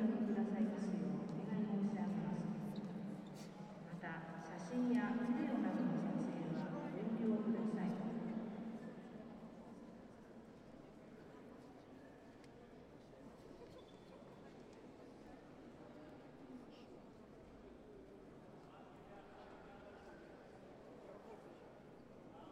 capella sistina, sistine chapel, sixtinsche kapelle